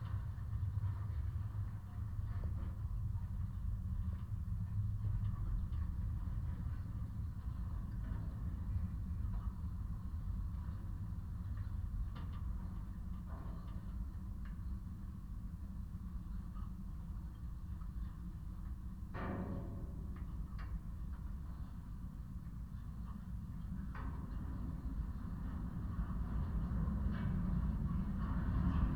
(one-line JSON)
{"title": "fence, Leliūnų sen., Lithuania", "date": "2016-09-05 12:50:00", "description": "contact mic on the metallic fence", "latitude": "55.41", "longitude": "25.53", "timezone": "Europe/Vilnius"}